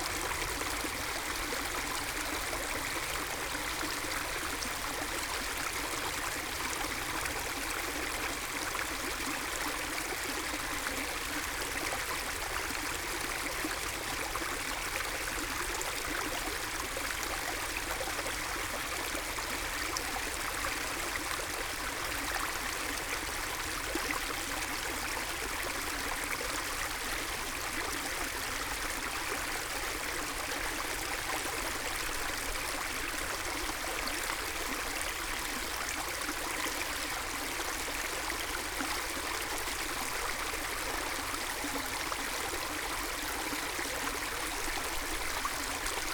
nature preserve, Lange Dammwiesen / Annatal, Stranggraben, small stream between two lakes (Großer u. Kleiner Stienitzsee).
(Sony PCM D50, DPA 4060)
Germany